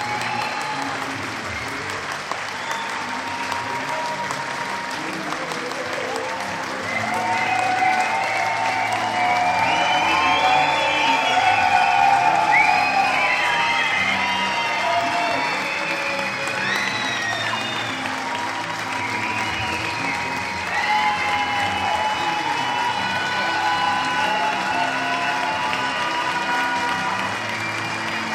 daniel johnston performs his song "devil town" and gets a standing ovation
the city, the country & me: november 2, 2008
26 November